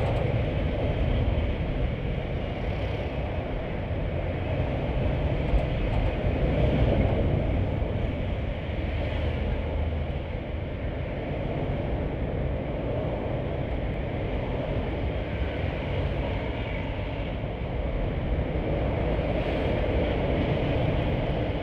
Tamsui, New Taipei City - Guandu Bridge
Guandu Bridge, Contact microphone fixed on the road surface of the bridge, Zoom H4n+Contact Mic.
New Taipei City, Taiwan